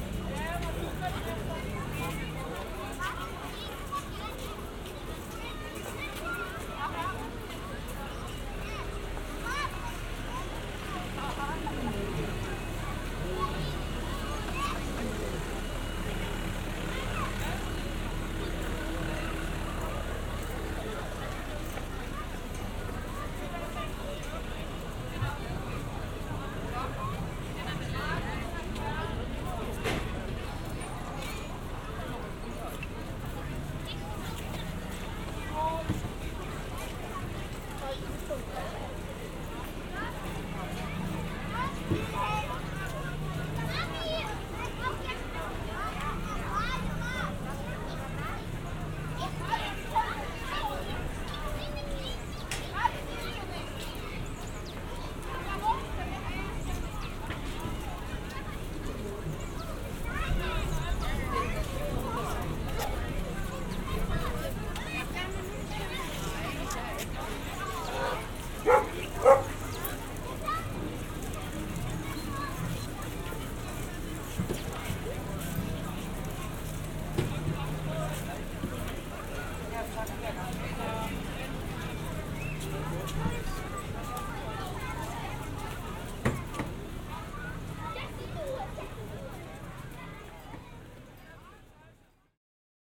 Legoland, Denmark, people
Binaural listening with Sennheiser Ambeo smart headset